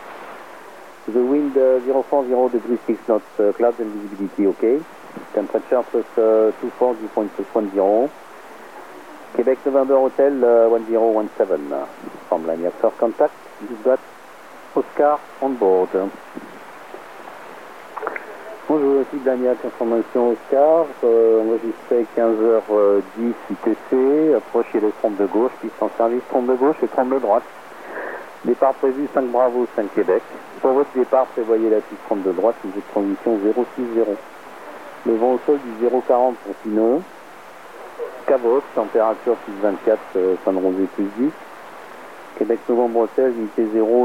Avenue Camille Flammarion, Toulouse, France - radio wave
astronomical observatory
radio wave scanner, Blagnac airport track
Captation : Uniden UBC 180 XLT / Diamond RH795 / Zoom H4n
Occitanie, France métropolitaine, France, 27 May 2021